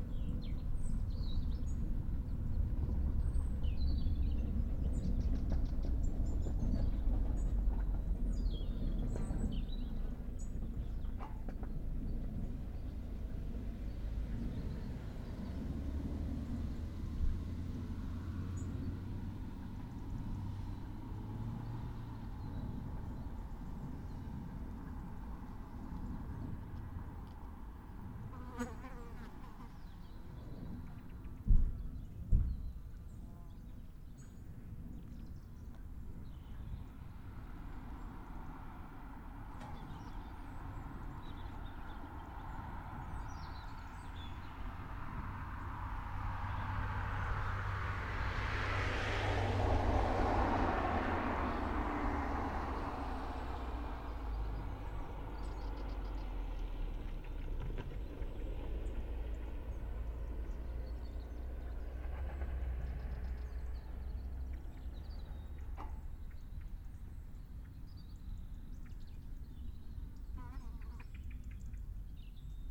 Lachania, Griechenland - Lahania, Rhodos, lookout
On the lookout on the western side of the village overlooking Lahania Valley. Afternoon. There has been some rain earlier. Birds.Nuts falling down from an Eucalyptus tree. People coming home from work. Binaural recording. Artificial head microphone facing west.Recorded with a Sound Devices 702 field recorder and a modified Crown - SASS setup incorporating two Sennheiser mkh 20 microphones.
27 October, 15:30